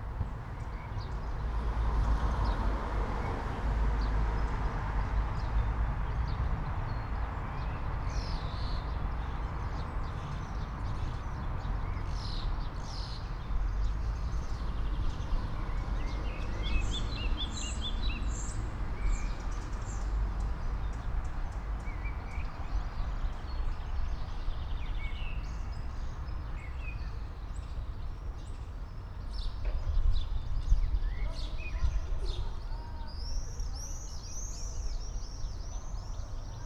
all the mornings of the ... - may 4 2013 sat
Maribor, Slovenia, 4 May 2013